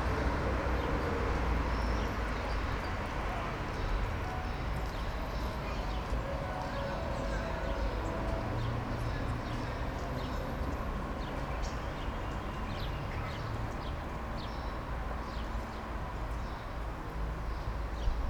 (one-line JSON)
{"title": "park window - musicians in pavilion, birds, aeroplane, car traffic ...", "date": "2014-06-08 12:12:00", "description": "warm june morning ambience in the park, musicians tuning for 11 o'clock performance, song from childhood movie ”sreča na vrvici / meets on a leash\"", "latitude": "46.56", "longitude": "15.65", "altitude": "285", "timezone": "Europe/Ljubljana"}